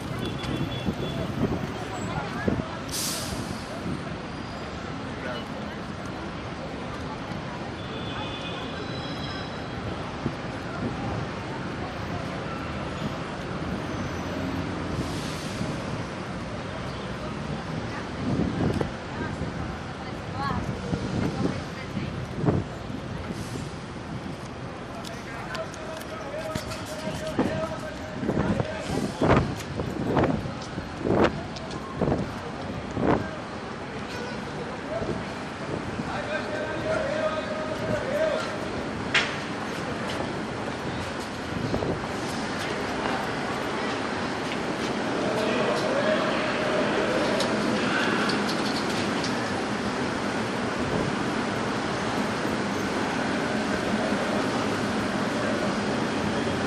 Terminal de barcas, Niterói - RJ. - Terminal de Barcas.
Recording the environment ferry terminal in Niterói: Araribóia Square, waiting room and passenger space inside the boat. The recording was made with a mini-digital recorder.
Gravação do ambiente do terminal de barcas da cidade de Niterói: Praça Araribóia, sala de espera dos passageiros e espaço interno da barca. A gravação foi realizada com um mini-gravador digital.
Niterói - Rio de Janeiro, Brazil, 24 October